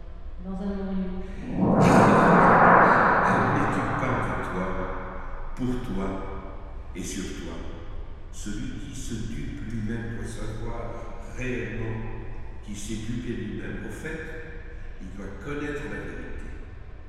R. da Cadeia, Elvas, Portugal - Sound piece
Vasco Araujo sound piece @ Museu de Arte Contemporanea de Elvas. Recorded with a pair of primo 172 omni mics in AB stereo configuration into a SD mixpre6.